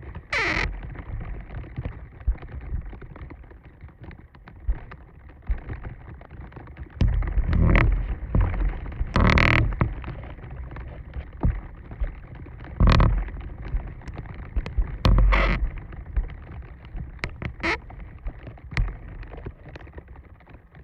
Šlavantai, Lithuania - Tree branch brushing against wooden fence, creaking
Dual contact microphone recording of a tree branch, pressing against a wooden fence and occasionally brushing due to wind pressure. During the course of recording it started to rain, droplets can be heard falling onto the branch surface.